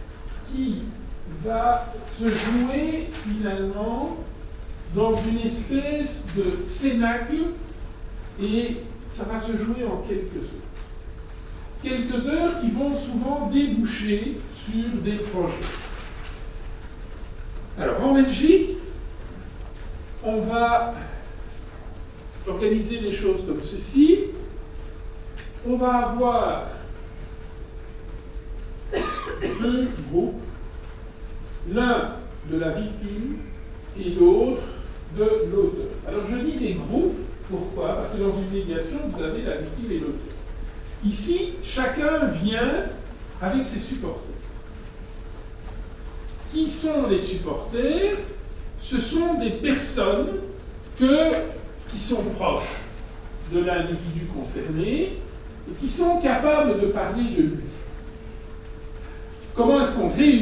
Centre, Ottignies-Louvain-la-Neuve, Belgique - A course of social matters
A course of social matters, in the big Agora auditoire.